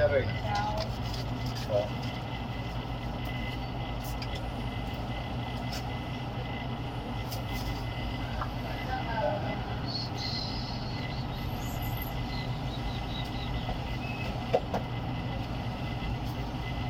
a, Cra., Medellín, Antioquia, Colombia - Tienda
Información Geoespacial
(latitud:, longitud: )
Ciudadela San Michel, tienda
Descripción
Sonido Tónico: aire acondicionado
Señal Sonora: señora de la tienda hablando
Micrófono dinámico (celular)
Altura: 2,21 cm
Duración: 3:00
Luis Miguel Henao
Daniel Zuluaga
Valle de Aburrá, Antioquia, Colombia